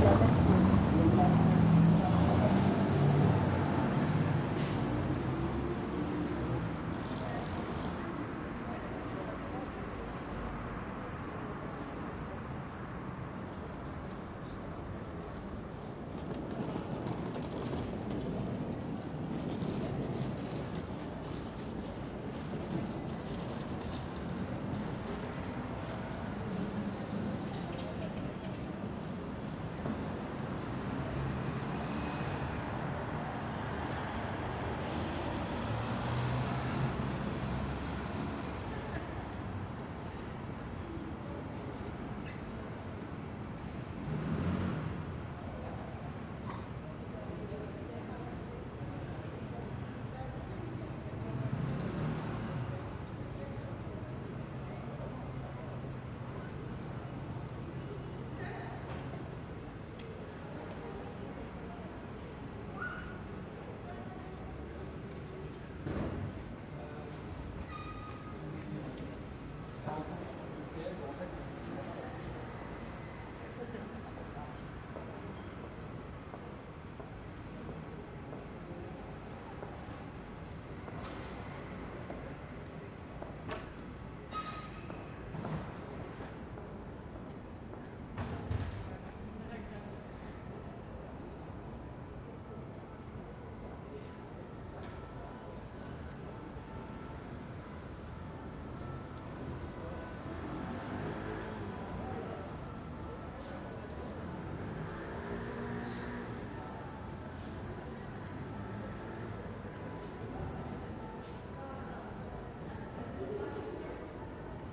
Rue Roger Verlomme, Paris, France - AMB PARIS EVENING RUE ROGER VERLOMME MS SCHOEPS MATRICED
This is a recording of a small cobbled street in the 3th Paris district during evening. I used Schoeps MS microphones (CMC5 - MK4 - MK8).
Île-de-France, France métropolitaine, France, February 2022